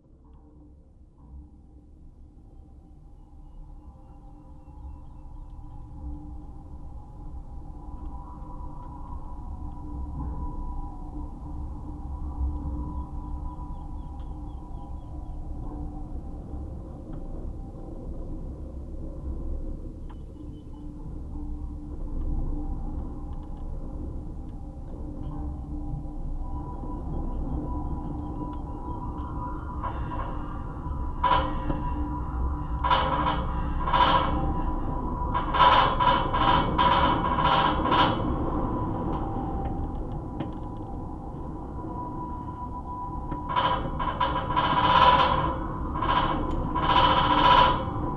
Florac, France - Antenna drones
This is a very big antenna, supported by cables. This is the invisible drone sounds of the wind, recorded with contact microphones.
Used : Audiatalia contact miscrophones used mono on a cable.
29 April 2016